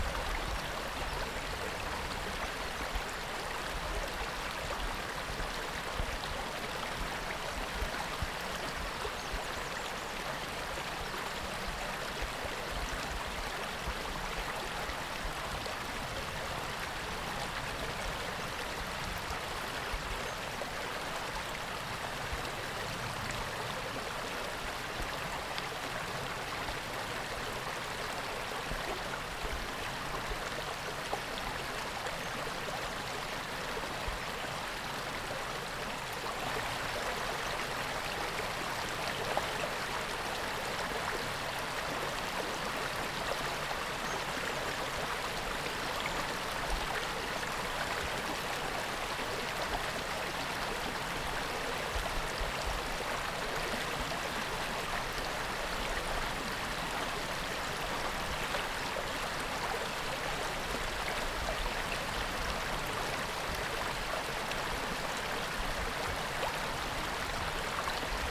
Schleiden, Deutschland - Bach östlich von Vogelsang / Brook east of Vogelsang
Unterhalb von Vogelsang plätschert ein Bach. / Below Vogelsang a rippling brook.
Schleiden, Germany, 23 February 2014